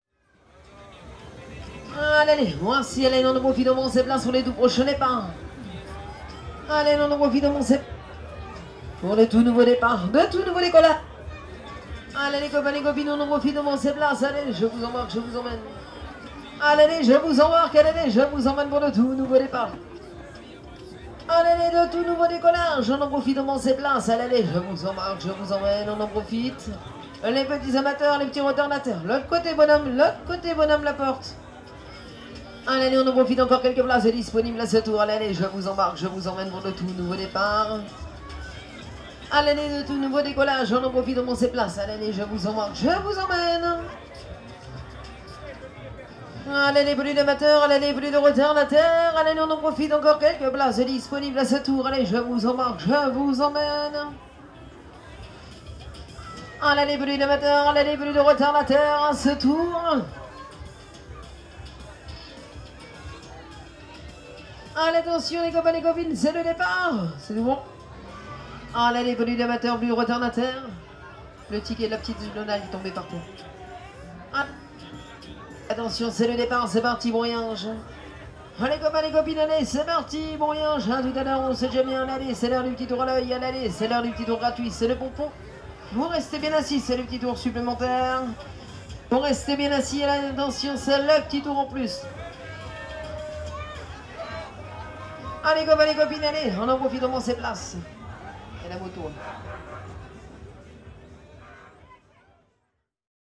St-Omer (Pas-de-Calais)
Ducasse - fête foraine
ambiance - extrait 2 - fin d'après-midi
Fostex FR2 + AudioTechnica BP425
France métropolitaine, France, 27 February 2022